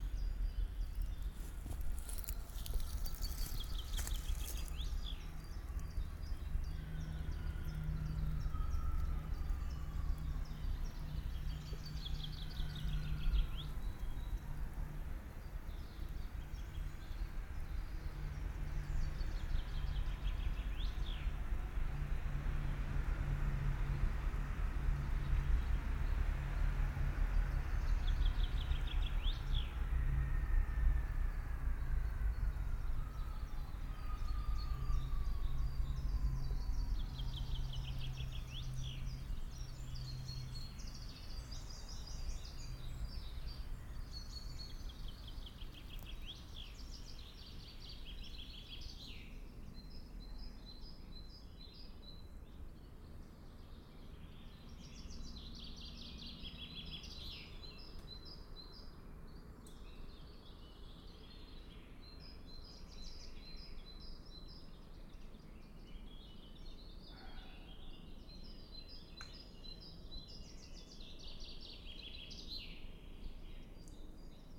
Landgoed Denk en Werk, Spankeren, Netherlands - Bockhorsterbos
Soundfield microphone stereo decode. Birds, Tractor.